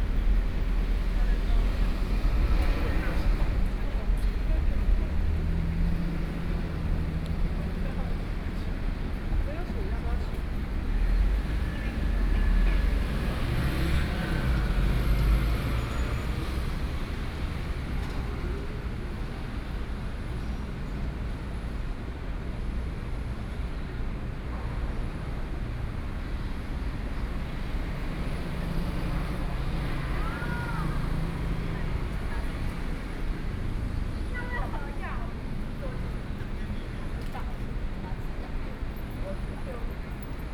in the Songshan Cultural and Creative Park Gateway, The traffic on the street with the crowd between, Sony PCM D50 + Soundman OKM II
Taipei City, Taiwan, 10 September 2013